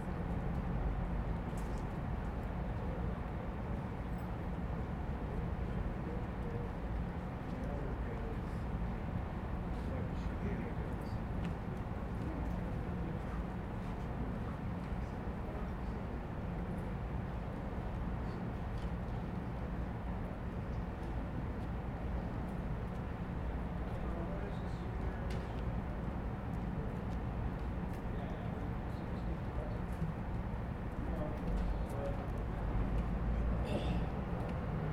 State St, Santa Barbara, CA, USA - Quiet Downtown Afternoon
A calm Friday afternoon in Downtown Santa Barbara, this recording was taken in a small courtyard just on the side of State Street outside a Metropolitan Theater. Wedged between a multitude of shops, theatres and cafes, we can hear the steady footsteps of people passing by, the clinking of cutlery from nearby eateries, and the distant flow of traffic--as well as some very quiet birds in the trees above.